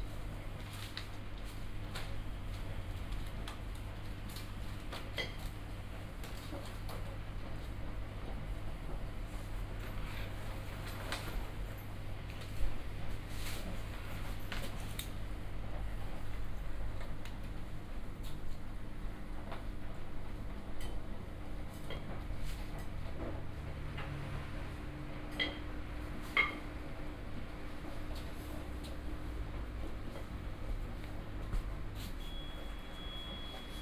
berlin, hermannstr. - waschsalon / laundry
waschsalon / laundry ambience